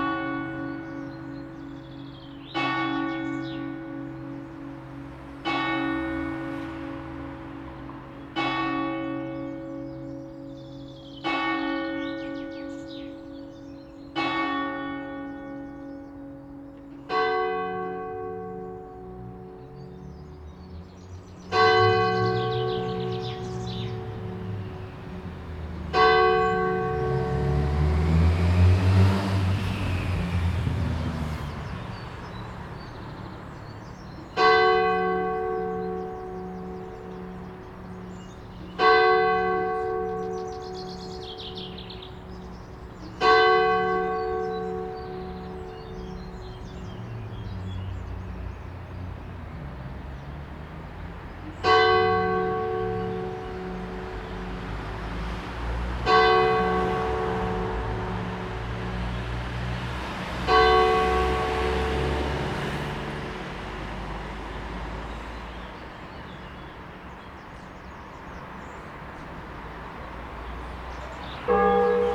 {"title": "Solingen-Ohligs, Deutschland - Glocken von St. Josef / Bells of St. Josef", "date": "2015-04-21 07:00:00", "description": "Morgens um 7:00 Uhr läuten die Glocken von St. Josef in Ohligs, Straßenverkehr / In the morning at 7:00 clock ring the bells of St. Joseph in Ohligs, sound of the traffic", "latitude": "51.16", "longitude": "7.00", "altitude": "119", "timezone": "Europe/Berlin"}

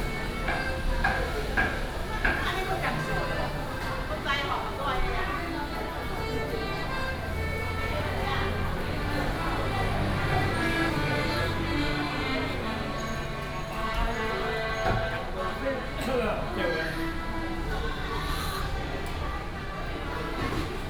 {"title": "北苗公有零售市場, Miaoli City - In the Market", "date": "2017-01-18 09:27:00", "description": "walking In the Market", "latitude": "24.57", "longitude": "120.82", "altitude": "47", "timezone": "GMT+1"}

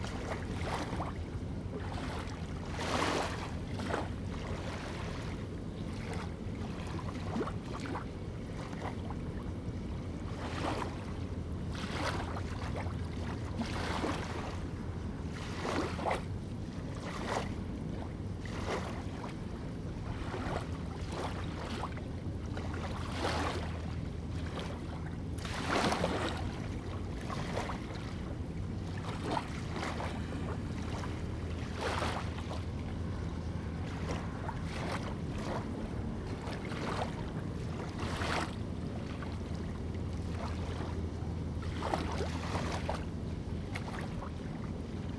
{"title": "淡水, Tamsui District, New Taipei City - At the quayside", "date": "2015-07-17 10:23:00", "description": "At the quayside, Tapping the pier tide\nZoom H2n MS+XY", "latitude": "25.17", "longitude": "121.44", "timezone": "Asia/Taipei"}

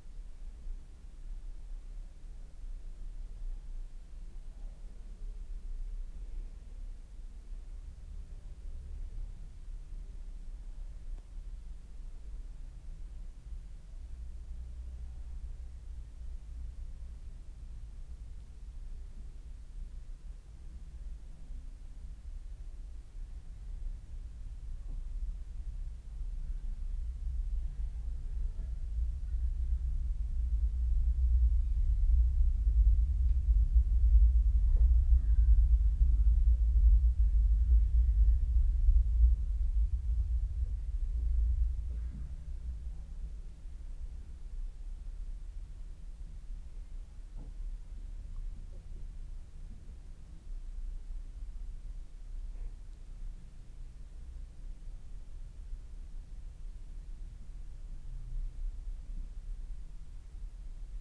{"title": "Mitte, Berlin, Germany - The Room of Silence", "date": "2013-08-11 12:51:00", "description": "(binaural)Field recordings of 'The Room of Silence'", "latitude": "52.52", "longitude": "13.38", "altitude": "39", "timezone": "Europe/Berlin"}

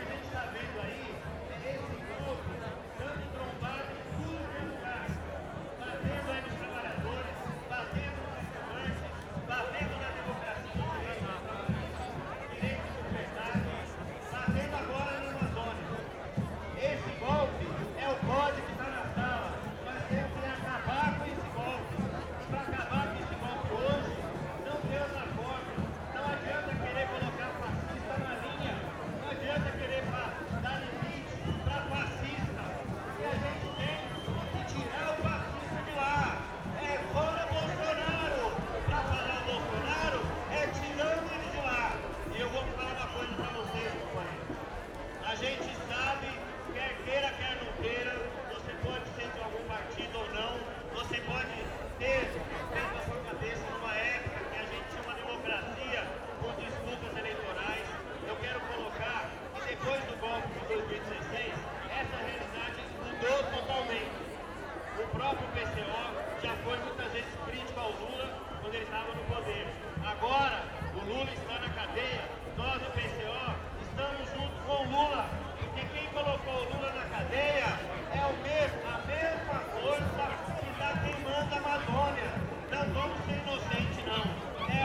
{"title": "Praça do Papa, Belo Horizonte - Demonstration in Brazil to preserve the Amazonian forest", "date": "2019-08-25 11:30:00", "description": "In Belo Horizonte at \"Praza do Papa\" on last Sunday, people doing a demonstration to preserve the forest. Voices of the crowd, somebody talking on microphone and some drums on the left.\nRecorded with an ORTF setup Schoeps CCM4x2\nOn a MixPre6 Sound Devices\nSound Ref: BR-190825-02\nGPS: -19.955654, -43.914702", "latitude": "-19.96", "longitude": "-43.91", "altitude": "1096", "timezone": "America/Sao_Paulo"}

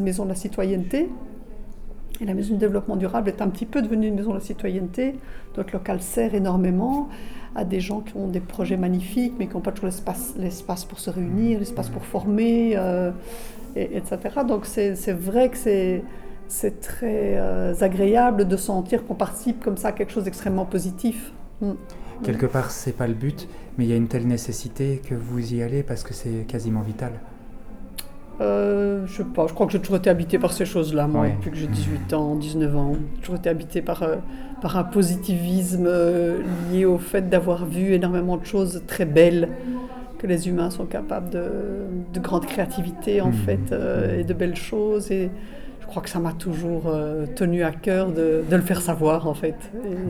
{"title": "Centre, Ottignies-Louvain-la-Neuve, Belgique - sustainable development house", "date": "2016-03-24 17:10:00", "description": "In Louvain-La-Neuve, there's a place called sustainable development house. This is a completely free access area where people can find various informations about environmental thematic. Books, workshops, seed, permaculture, there's a wide variety of goals. Completely in the heart of Louvain-La-Neuve, below an amphitheater, this house is a welcoming place. Aline Wauters explains us what is this special place and what can be found there.", "latitude": "50.67", "longitude": "4.61", "altitude": "115", "timezone": "Europe/Brussels"}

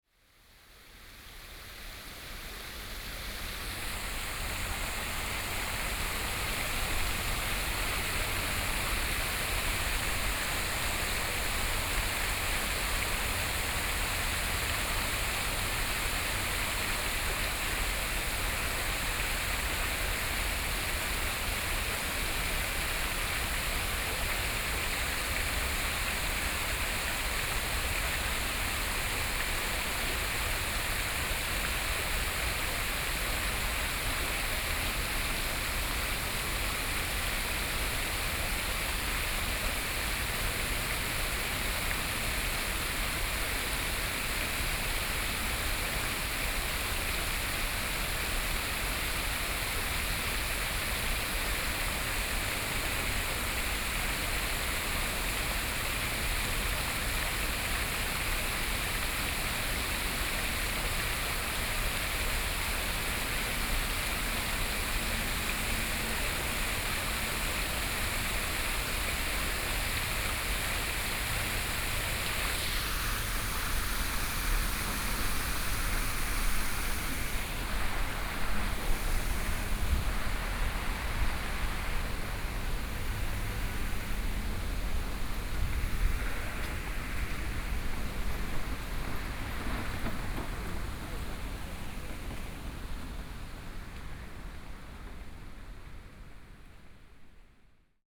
{"title": "Songshan Cultural and Creative Park - Fountain", "date": "2013-09-10 14:17:00", "description": "Fountain, Sony PCM D50 + Soundman OKM II", "latitude": "25.04", "longitude": "121.56", "altitude": "11", "timezone": "Asia/Taipei"}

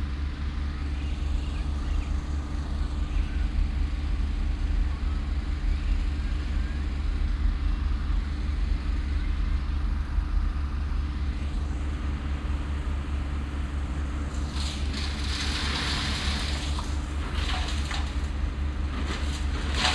{"title": "cologne, stadtgarten, schreddermaschine am weg", "date": "2008-05-08 20:40:00", "description": "schreddermaschine des grünflächen amtes entsorgt äste - zu beginn im hintergrund kirchglocken der christus kirche\nstereofeldaufnahmen im mai 08 - morgens\nproject: klang raum garten/ sound in public spaces - outdoor nearfield recordings", "latitude": "50.94", "longitude": "6.94", "altitude": "55", "timezone": "Europe/Berlin"}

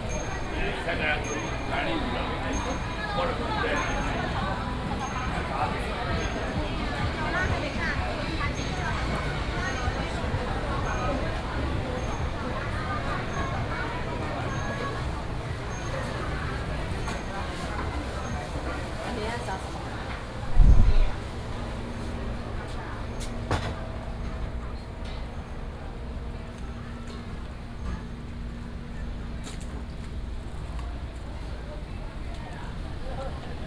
{"title": "大雅公有市場, Taichung City - Public retail market", "date": "2017-09-24 09:49:00", "description": "walking in the Public retail market, Binaural recordings, Sony PCM D100+ Soundman OKM II", "latitude": "24.22", "longitude": "120.65", "altitude": "145", "timezone": "Asia/Taipei"}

